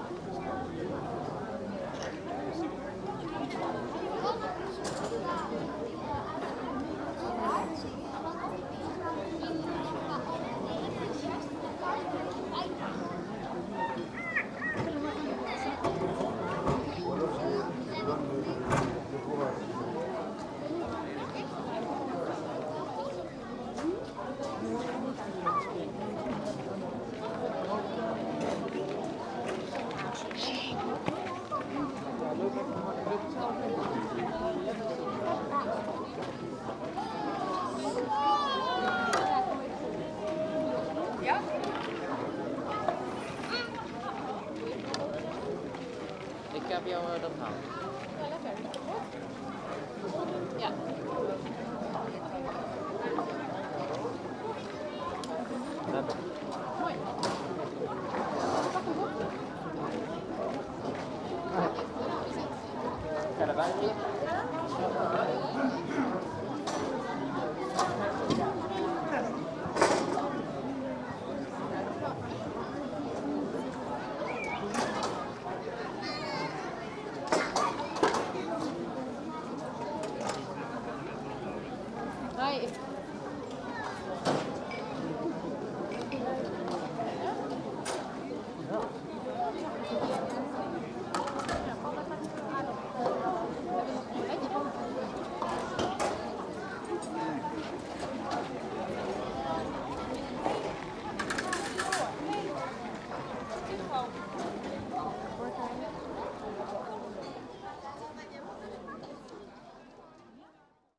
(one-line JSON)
{"title": "Le Bourg, Loubejac, France - Market, bells at 7 pm.", "date": "2022-08-18 18:58:00", "description": "Marché des producteurs, cloches de 19h00.\nTech Note : Sony PCM-M10 internal microphones.", "latitude": "44.59", "longitude": "1.09", "altitude": "269", "timezone": "Europe/Paris"}